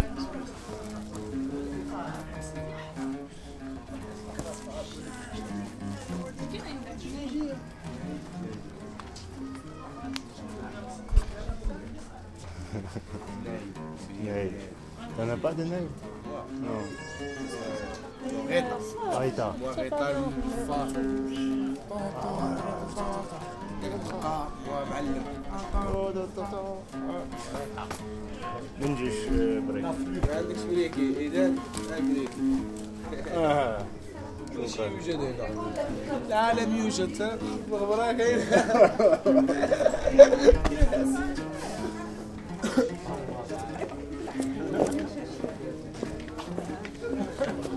Paysage sonore : rencontres, ambiance et bain sonore de la médina de Fes. Lorsque les cultures résonnent ensemble...
Fes, Morocco, 8 August 2010